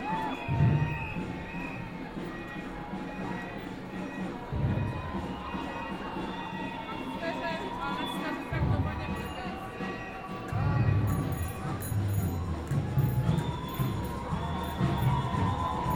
Recording of passing Manifa on International Women's Day.
Recorded with Soundman OKM on... iPhone (with some zoom adapter I guess...)